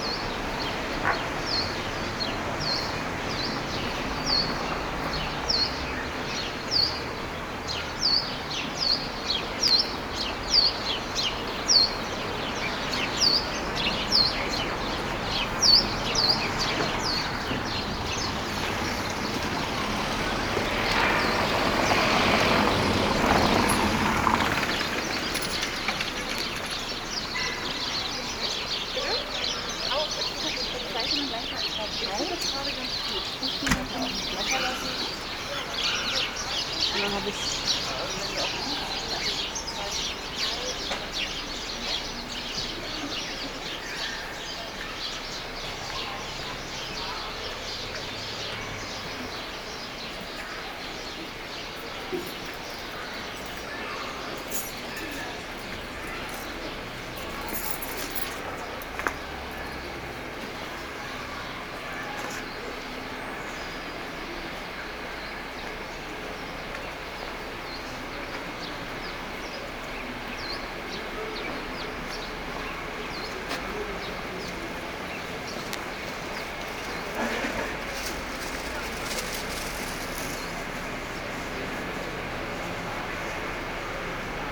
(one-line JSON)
{"title": "Graefestraße, Berlin, Deutschland - Soundwalk Graefestrasse", "date": "2018-02-09 14:45:00", "description": "Soundwalk: Along Graefestrasse until Planufer\nFriday afternoon, sunny (0° - 3° degree)\nEntlang der Graefestrasse bis Planufer\nFreitag Nachmittag, sonnig (0° - 3° Grad)\nRecorder / Aufnahmegerät: Zoom H2n\nMikrophones: Soundman OKM II Klassik solo", "latitude": "52.49", "longitude": "13.42", "altitude": "37", "timezone": "Europe/Berlin"}